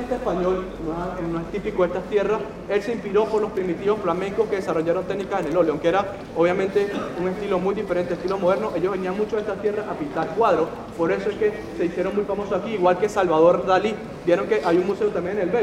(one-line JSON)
{"title": "Brugge, België - Tourist guidance", "date": "2019-02-16 13:30:00", "description": "Arentshuis. Tourist guidance in Spanish for many tourists, automatic speech repeated a thousand times. The guide voice reverberates on the brick facades of this small rectangular square.", "latitude": "51.20", "longitude": "3.22", "altitude": "5", "timezone": "GMT+1"}